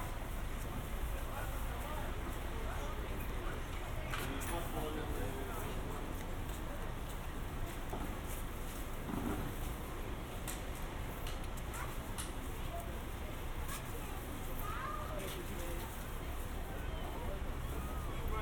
Under the heat of midday in Ventspils bazaar. Carillon. Sennheiser ambeo headset recording
Kurzeme, Latvija, 13 July 2021, ~12:00